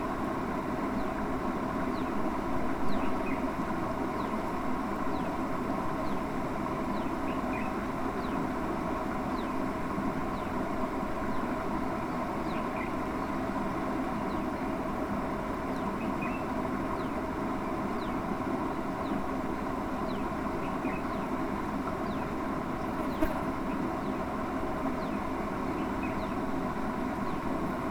District d'Aurangabad, Maharashtra, Inde - Peaceful Ajanta
Close to the Ajanta 5th century Buddhist caves flows a river.
Maharashtra, India, 14 October 2015